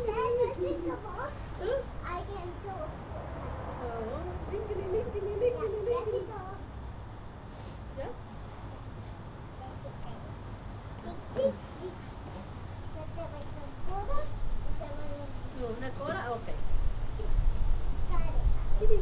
neoscenes: in-and-out burger stop
24 February 2011, 16:32